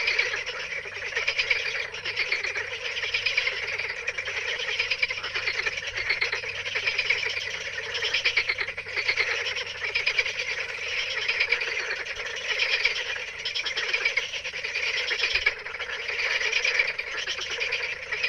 Lacara, Sithonia, Griechenland - Night frogs
night frogs in a pond directly on the sea